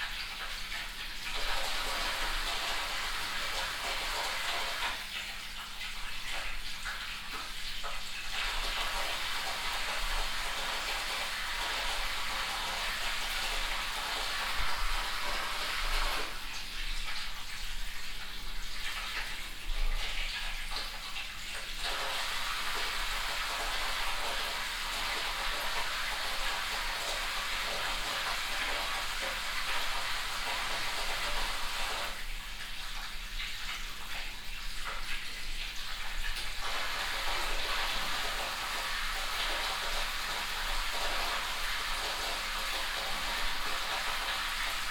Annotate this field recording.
set up of the installation of finnbogi petursson - filling in the water